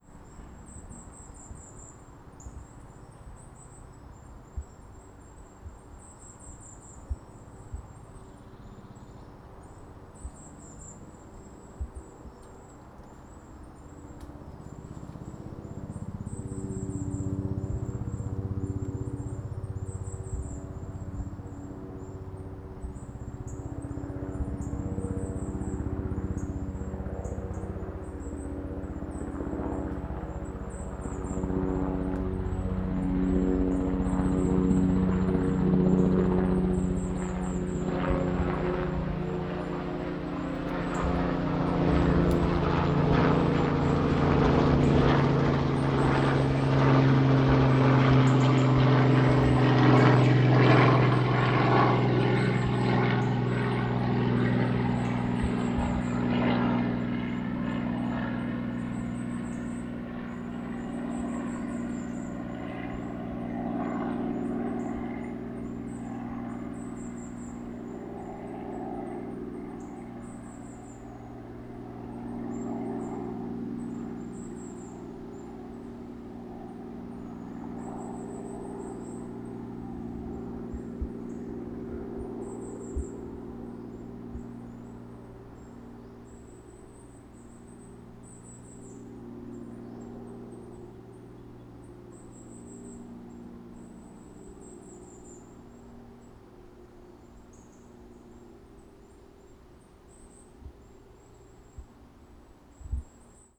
Howwell Wood, Hemsworth, UK - Helicopter flies over wood
In the wood one evening before sunset for Foley purposes, gathering some bird sounds which were drowned out by a passing helicopter.
Recorded with Zoom H1